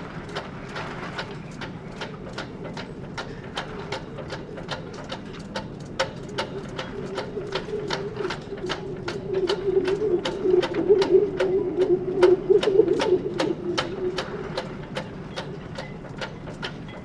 {"title": "Howling flagpoles, Pirita Harbor Tallinn", "date": "2011-03-12 12:40:00", "description": "flagpoles knocking and howling in the wind on the Pirita Harbor", "latitude": "59.47", "longitude": "24.82", "altitude": "5", "timezone": "Europe/Tallinn"}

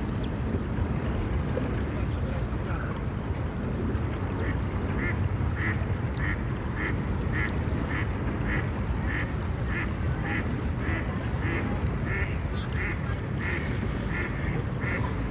{"title": "River Odra waterfront, Słubice, Polen - River Odra waterfront facing West, Slubice / Frankfurt (Oder) - echoes and eight rowers", "date": "2011-03-30 18:32:00", "description": "River Odra waterfront facing West, Slubice / Frankfurt (Oder) - echoes and eight rowers. Voices of children echoing over the water surface, sports rowers train in an eight oars with coxswain. [I used the Hi-MD-recorder Sony MZ-NH900 with external microphone Beyerdynamic MCE 82]", "latitude": "52.36", "longitude": "14.55", "altitude": "15", "timezone": "Europe/Warsaw"}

